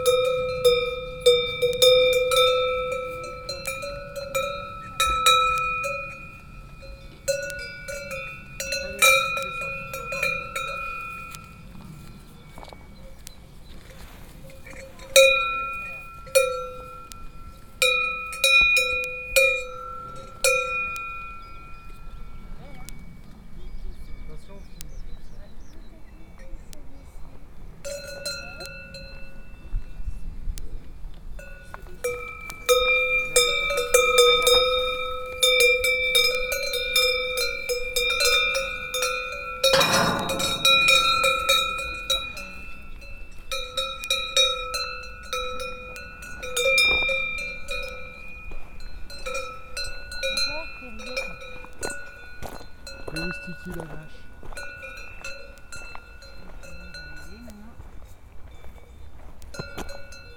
Les vaches du Mont Bochor / Cows at the Mont Bochor. Binaural recording.